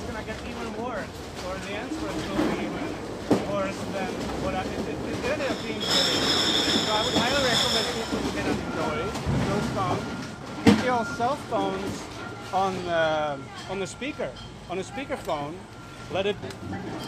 Northwest Berkeley, Berkeley, CA, USA - transfer station West Berkeley
being interviewed by Sam Harnett for KQED program / California report / about field recording and Aporee while recycling beer bottles .. $6.57 received